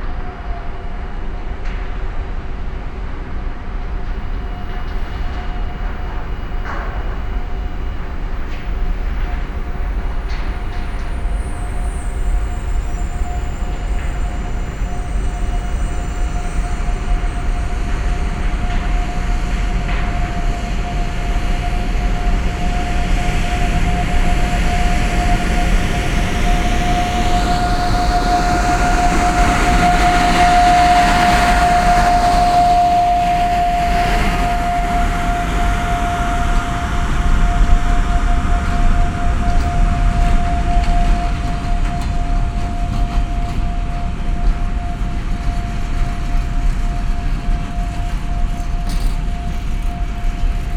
cargo train terminal, Ljubljana - train arrives and stops
a freight train arrives and stops in front of me, producing very heavy low frequencies
(Sony PCM-D50, DPA4060)